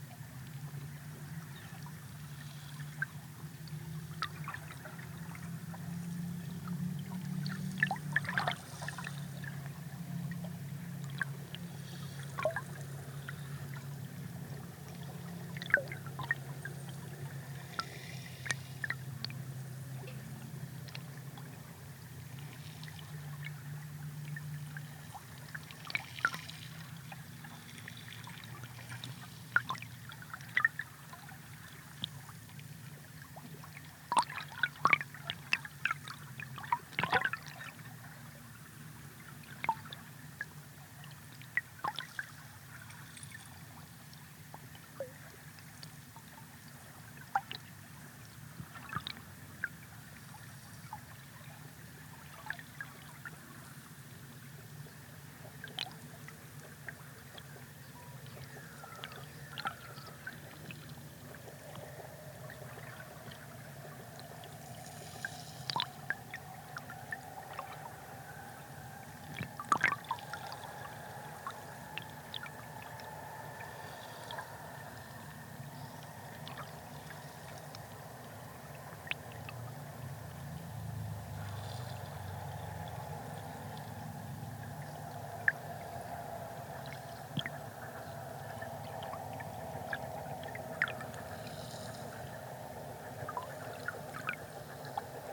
Recorded using Hydrophone, high-tide.
London, UK, 28 January